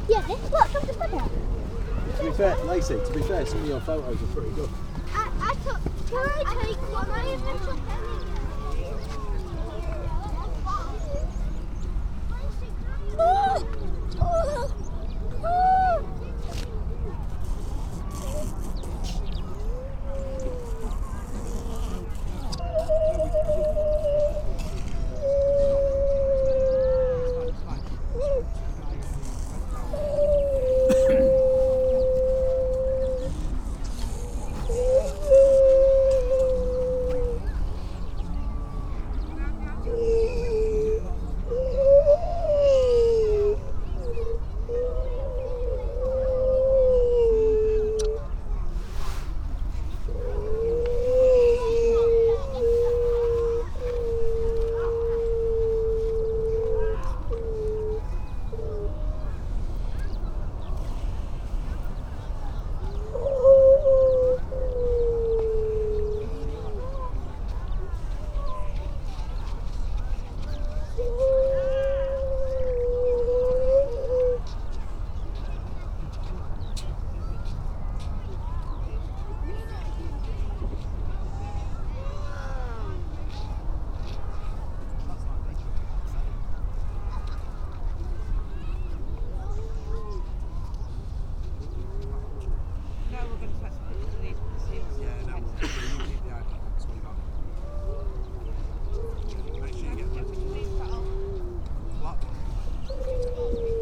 Unnamed Road, Louth, UK - grey seals ... donna nook ...
grey seals ... donna nook ... generally females and pups ... SASS ... bird calls ... pied wagtail ... skylark ... dunnock ... rock pipit ... crow ... all sorts of background noise ... sometimes you wonder if the sound is human or seal ..? amazed how vocal the females are ...